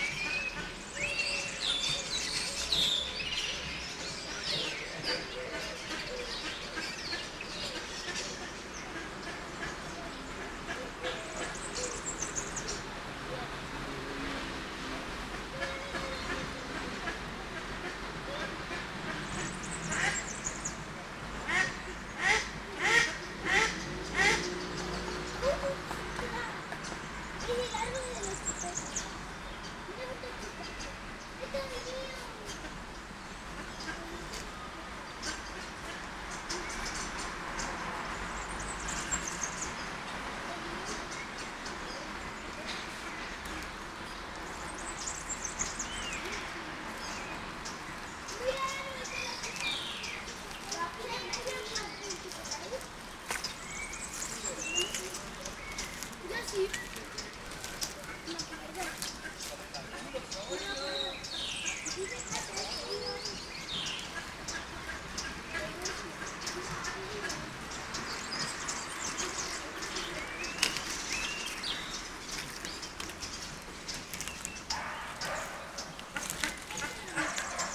Parque de Castelar, Badajoz, Spain - Garden Birds - Garden Birds
Birds, ducks, traffic and people. Recorded with a set of primo 172 omni capsules in AB stereo configuration into a SD mixpre6.
14 January 2018, 17:07